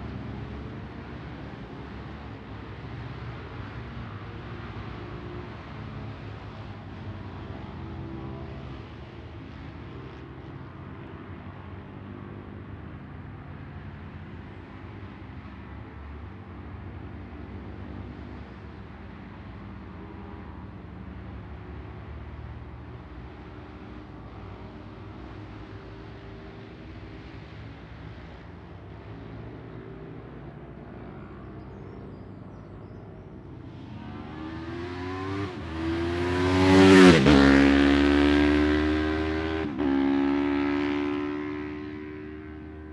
Jacksons Ln, Scarborough, UK - barry sheene classic 2009 ... practice ...
barry sheene classic 2009 ... practice ... one point stereo mic to minidisk ...